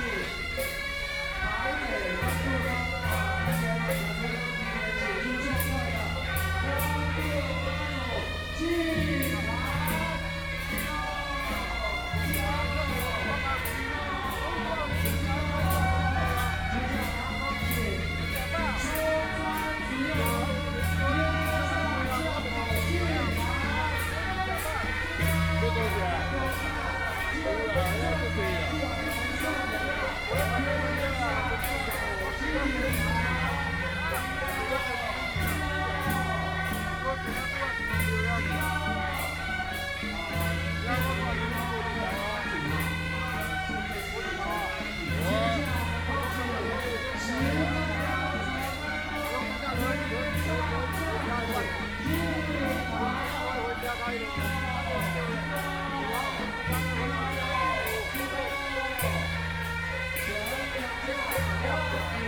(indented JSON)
{
  "title": "National Taiwan Museum, Taipei City - Traditional temple festivals",
  "date": "2013-11-16 12:15:00",
  "description": "Traditional temple festivals, Ceremony to greet the gods to enter the venue, Binaural recordings, Zoom H6+ Soundman OKM II",
  "latitude": "25.04",
  "longitude": "121.52",
  "altitude": "21",
  "timezone": "Asia/Taipei"
}